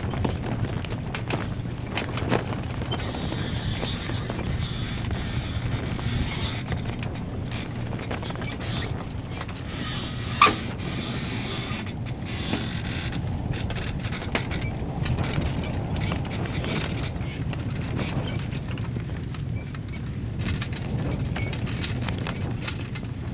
Poole, UK - Ready the main sail
Recorded on a Fostex FR-2LE Field Memory Recorder using a Audio Technica AT815ST and Rycote Softie on board the yacht "Carnival"
1 April, ~11:00